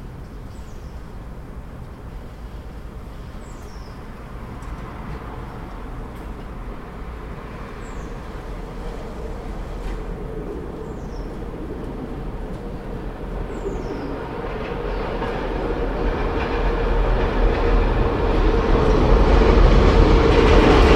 inner yard with pigeons and train
5 February